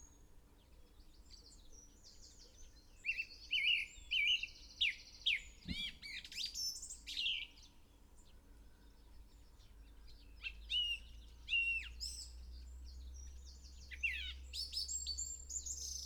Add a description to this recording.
song thrush in song ... dpa 4060s clipped to twigs to Zoom H5 ... bird song ... calls from ... reed bunting ... yellowhammer ... wren ... blackbird ... whitethroat ... wood pigeon ... dunnock ... linnet ... tree sparrow ...